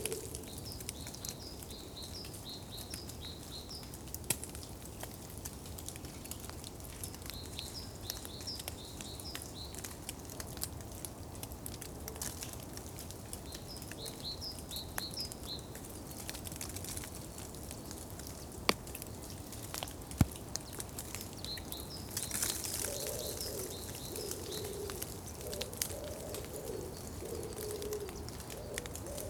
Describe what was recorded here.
The area here at the ''Stachel'' forest reserve can only be reached on foot. The hike leads across extensive meadows to the edge area between open country and the forest area where this recording was made. This field recording was recorded with a tree ear microphone setup. This extraordinary living space has always fascinated me. Now I am trying to make these unique moments audible for now and for the future of this very special place here in this protected area.